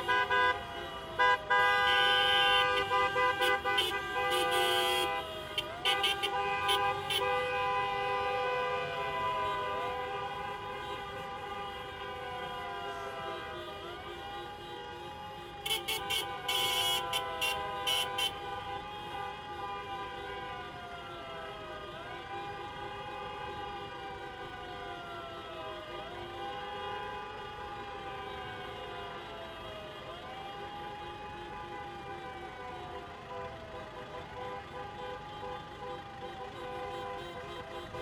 Place Poelaert, Bruxelles, Belgique - Taxis demonstration
Taxis protesting against Uber service.
Multiple taxi cars on the place, horns, klaxons. Voice in the megaphone and interviwe of a driver.
Manifestation de taxis contre la plateforme Uber.
Région de Bruxelles-Capitale - Brussels Hoofdstedelijk Gewest, België / Belgique / Belgien